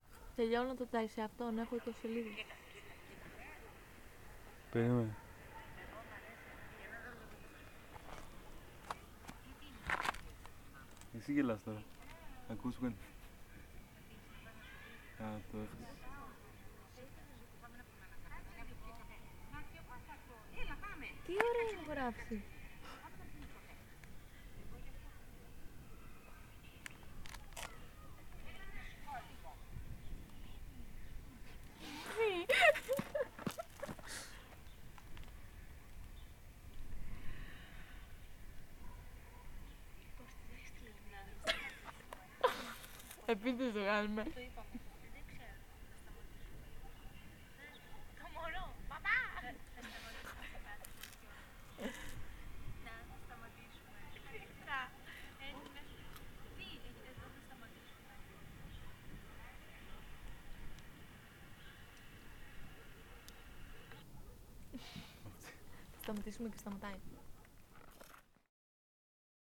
Record by : Alexandros Hadjitimotheou
21 August, 09:07